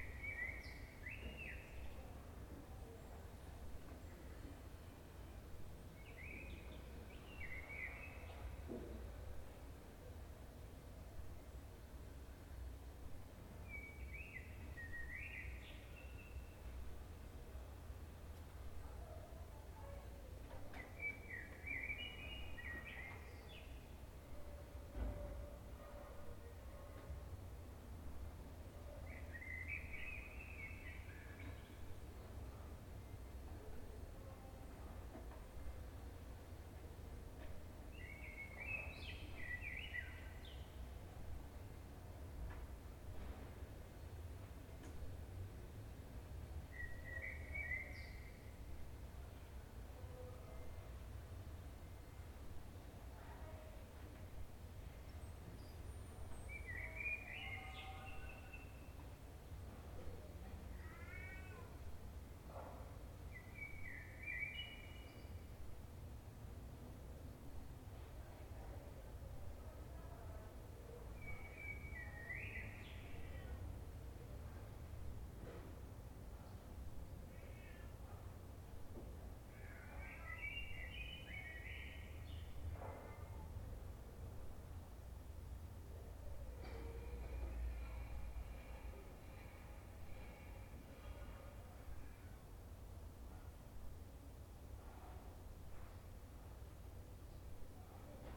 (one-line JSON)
{"title": "Milano, Italia - il cortile sul retro", "date": "2015-05-29 16:21:00", "description": "cortile molto calmo, cinguettii", "latitude": "45.46", "longitude": "9.21", "altitude": "124", "timezone": "Europe/Rome"}